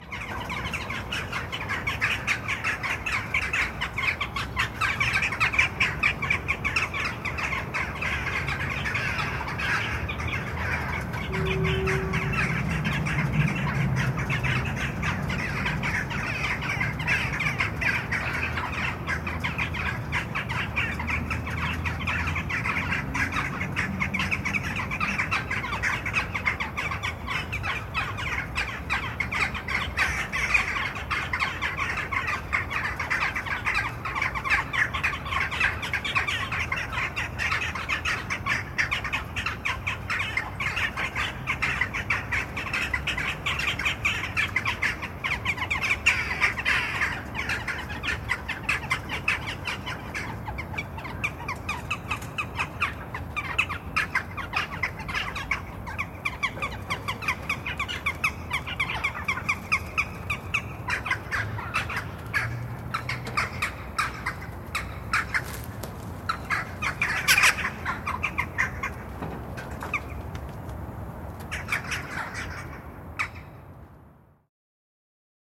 Jackdaws na CVUT
When leaving the building of the Technical University, Dejvice, one evening, we heard this group of Jackdaws squabbling in the trees.
10 December